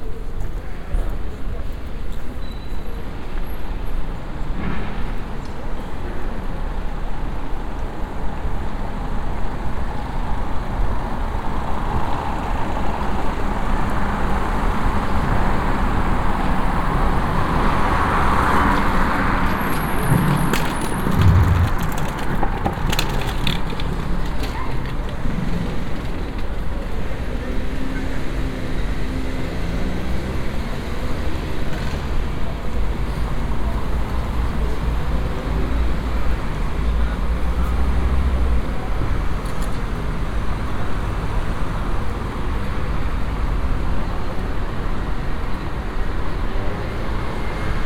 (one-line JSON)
{"title": "Place Charles de Gaulle, Lille, France - (406) Soundwalk around La GrandPlace in Lille", "date": "2018-11-14 16:02:00", "description": "Binaural soundwalk around Place Charles de Gaulle (La Grand'Place) in Lille.\nrecorded with Soundman OKM + Sony D100\nsound posted by Katarzyna Trzeciak", "latitude": "50.64", "longitude": "3.06", "altitude": "29", "timezone": "Europe/Paris"}